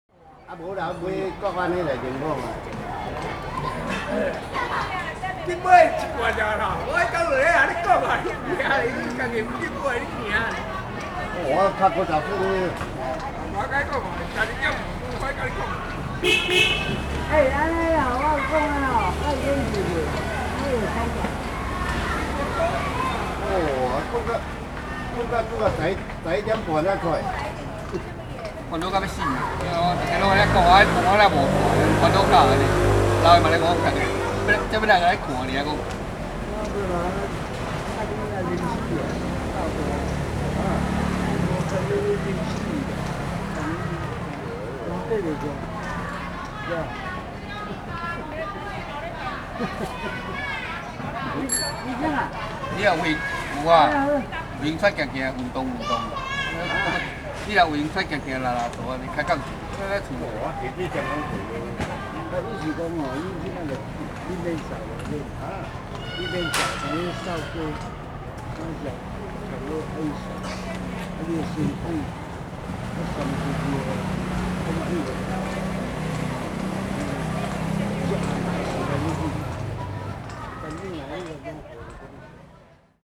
A group of elderly people chatting, Rode NT4, Sony Hi-MD MZ-RH1